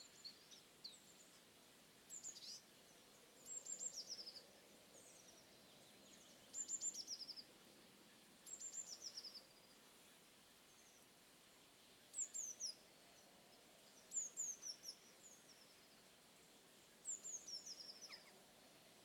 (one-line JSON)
{"title": "Lisburn, Reino Unido - Derriaghy Dawn-04", "date": "2014-06-22 06:32:00", "description": "Field Recordings taken during the sunrising of June the 22nd on a rural area around Derriaghy, Northern Ireland\nZoom H2n on XY", "latitude": "54.55", "longitude": "-6.04", "altitude": "80", "timezone": "Europe/London"}